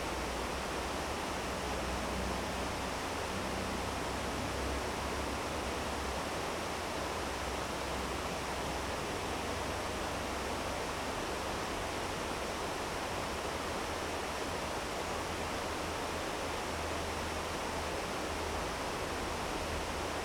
burg/wupper, mühlendamm: wehr - the city, the country & me: weir
weir of the formerly "kameralmühle", barking dog
the city, the country & me: july 24, 2012